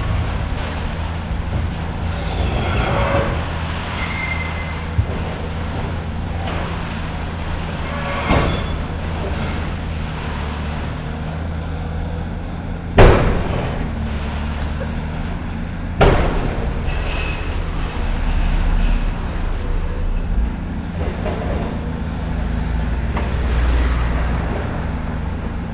berlin: maybachufer - the city, the country & me: demolition of a warehouse
demolition of a warehouse, excavator with grab breaks up parts of the building
the city, the country & me: march 2, 2016
Berlin, Germany